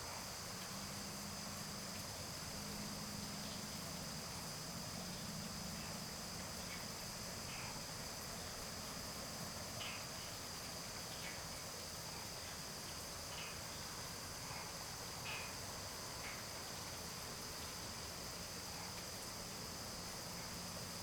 桃米溪, 埔里鎮桃米里 - In the stream shore
In the stream shore, The frogs chirp
Zoom H2n MS+XY